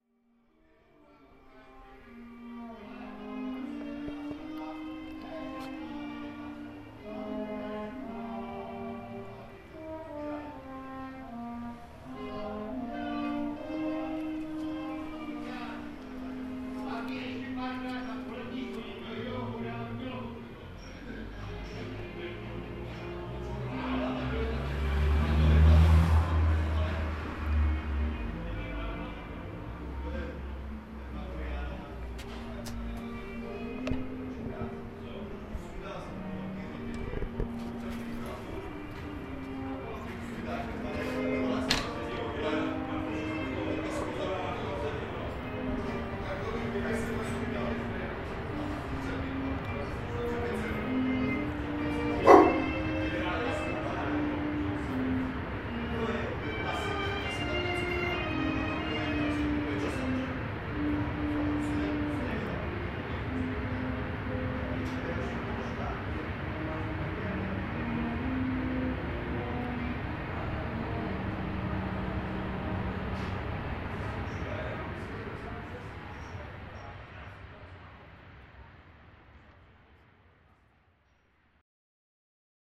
brass band rehearsing in the pub in Liben.